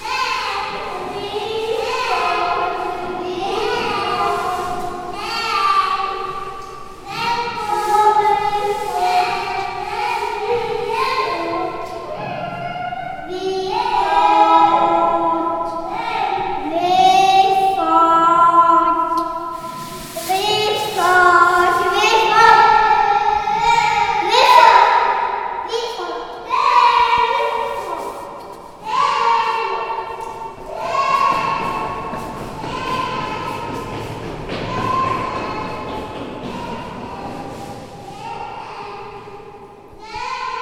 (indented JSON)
{
  "title": "Galerie Futura, Holeckova ulice",
  "date": "2011-12-18 17:48:00",
  "description": "Inside the Futura a gallery for conemporary arts with my dougter, singing, make a photo and lets leave.",
  "latitude": "50.07",
  "longitude": "14.39",
  "altitude": "226",
  "timezone": "Europe/Prague"
}